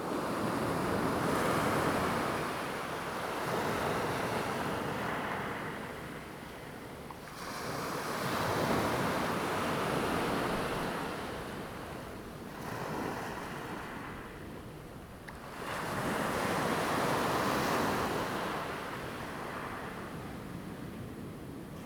Koto island, Taiwan - In the beach
In the beach, Sound of the waves
Zoom H2n MS +XY
Taitung County, Taiwan